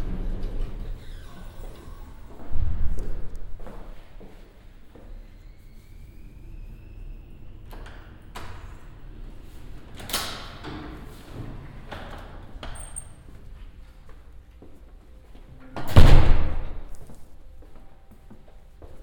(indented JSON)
{
  "title": "Bibliothek des Zentrums für Antisemitismusforschung @ TU Berlin - Enter Bib des Zentrums für Antisemitismusforschung",
  "date": "2022-03-09 13:20:00",
  "latitude": "52.51",
  "longitude": "13.32",
  "altitude": "40",
  "timezone": "Europe/Berlin"
}